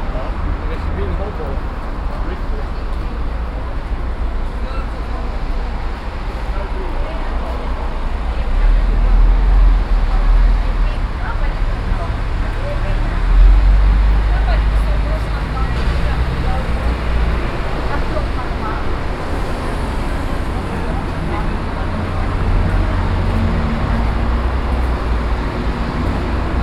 Oslo, Bispegata, Road traffic
Norway, Oslo, road traffic, cars, buses, binaural